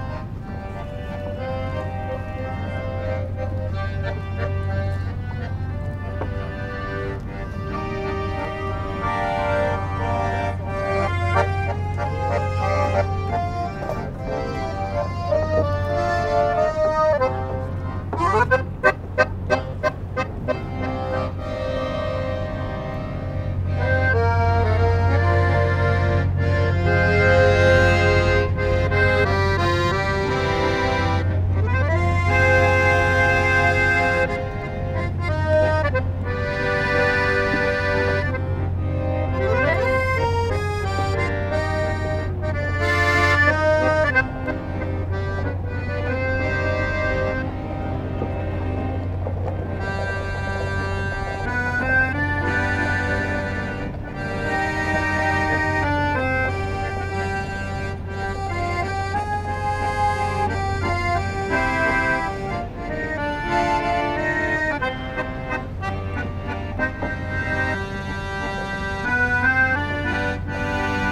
Pont des Arts, Paris, France - Accordion
Two persons are playing accordion on the Paris bridge called pont des Arts.